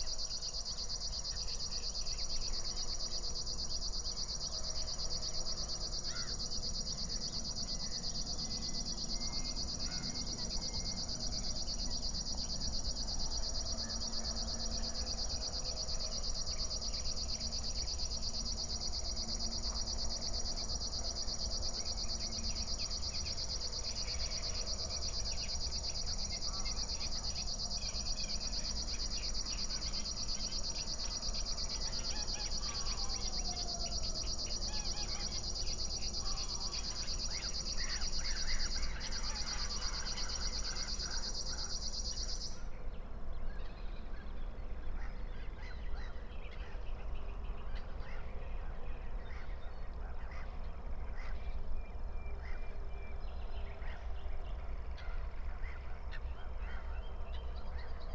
00:25 Berlin, Buch, Moorlinse - pond, wetland ambience
Deutschland, 30 May 2022, ~00:00